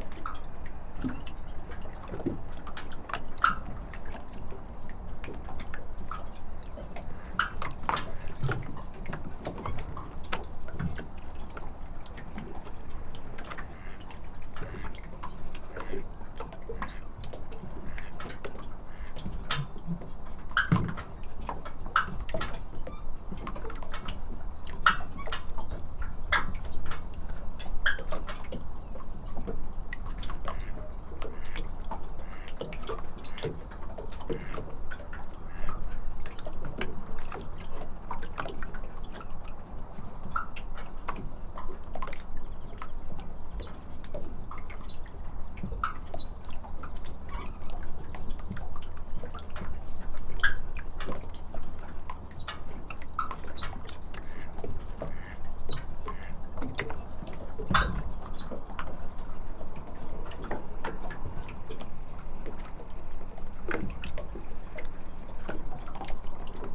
Sand, Umeå. Moored boats#1
Small boats gently rocking, tied up on a small marina. The small boats are used to get to the nearby island across the river where some local people from Umeå have weekend cottages.
Sweden, 5 May 2011